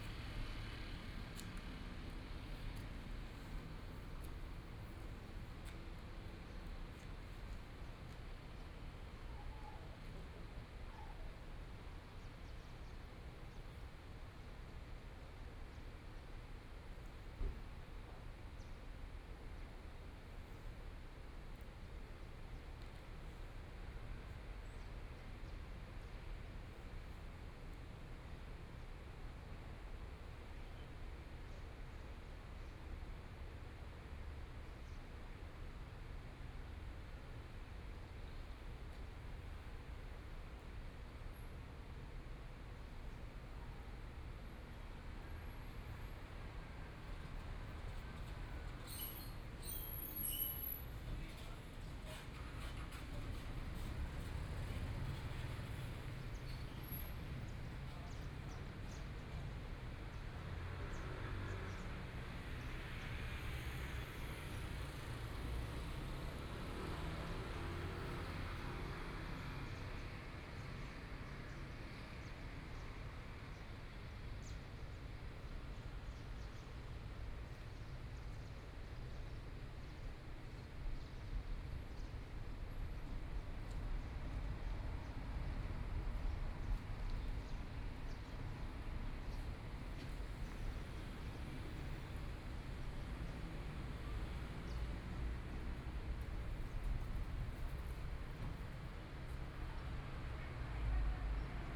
國防里, Hualien City - Environmental sounds
Aircraft flying through, Traffic Sound, Environmental sounds
Please turn up the volume
Binaural recordings, Zoom H4n+ Soundman OKM II
Hualien County, Taiwan, February 2014